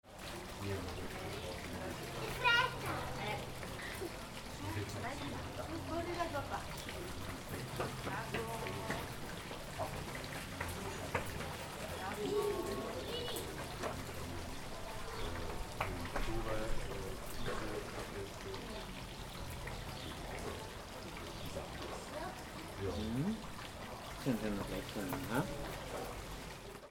Poschiavo, bar + gelateria - Poschiavo, Bar + Gelateria
Bar, Gelateria, Kindergeräusche, sitzen in der Bar bei strömendem Regen, Puschlav, Südbünden
Poschiavo, Switzerland